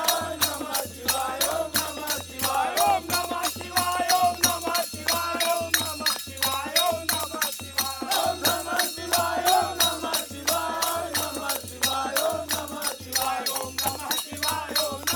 {"title": "Ghats of Varanasi, Chetganj, Varanasi, Uttar Pradesh, Inde - Benares - Morning Parade", "date": "2003-03-07 06:00:00", "description": "Benares\nParade - prière au levée du jour", "latitude": "25.31", "longitude": "83.01", "altitude": "71", "timezone": "Asia/Kolkata"}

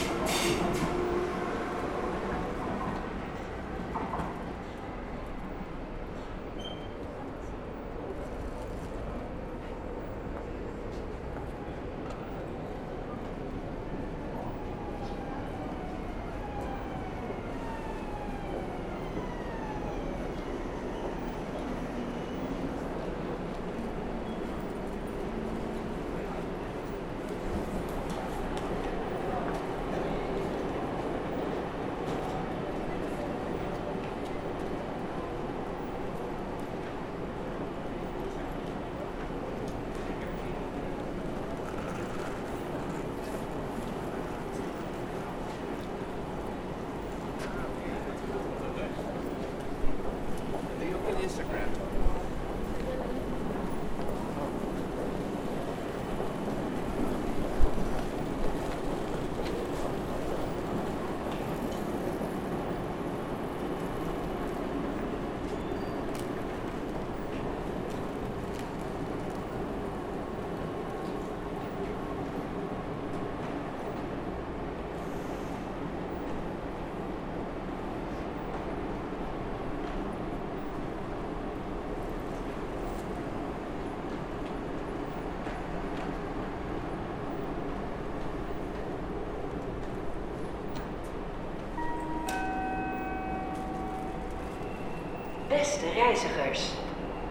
{"title": "Platform, Utrecht, Niederlande - utrecht main station platform 2019", "date": "2019-04-10 17:05:00", "description": "Walk to the platform from the station hall. The international train is delayed, several anouncements, other trains.\nRecorded with DR-44WL.", "latitude": "52.09", "longitude": "5.11", "altitude": "9", "timezone": "Europe/Amsterdam"}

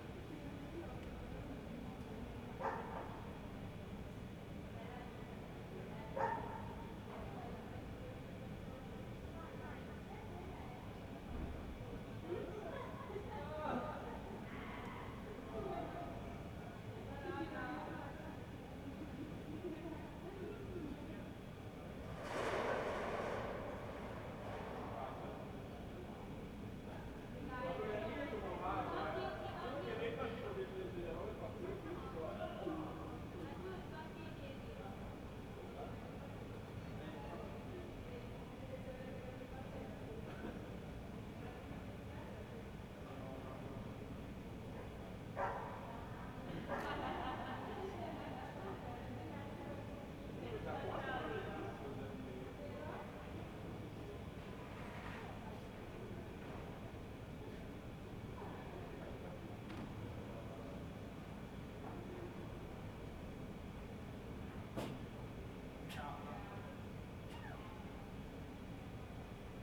{"title": "Ascolto il tuo cuore, città. I listen to your heart, city. Several chapters **SCROLL DOWN FOR ALL RECORDINGS** - Terrace at sunset with string quartet in background in the time of COVID19 Soundscape", "date": "2020-04-07 19:46:00", "description": "\"Terrace_at_sunset_wth string quartet in background in the time of COVID19\" Soundscape\nChapter XXXVI of Ascolto il tuo cuore, città. I listen to your heart, city\nTuesday April 7th 2020. Fixed position on an internal terrace at San Salvario district Turin, three weeks after emergency disposition due to the epidemic of COVID19.\nStart at 7:46 p.m. end at 8:21 p.m. duration of recording 34'43'', sunset time at 8:04.", "latitude": "45.06", "longitude": "7.69", "altitude": "245", "timezone": "Europe/Rome"}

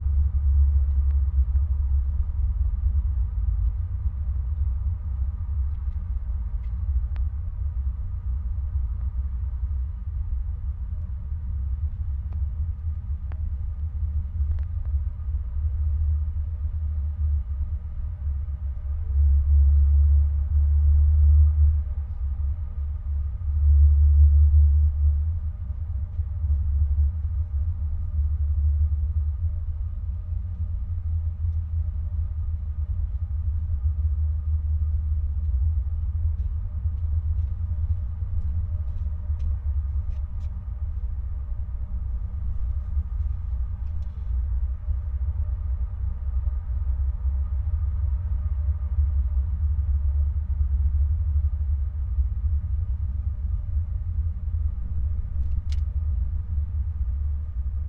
{"title": "Kruidtuin, Koningsstraat, Sint-Joost-ten-Node, België - Inside a garbage can", "date": "2013-03-26 14:50:00", "description": "We put a electret microphone into a garbage can and we listen to it.", "latitude": "50.85", "longitude": "4.37", "altitude": "42", "timezone": "Europe/Brussels"}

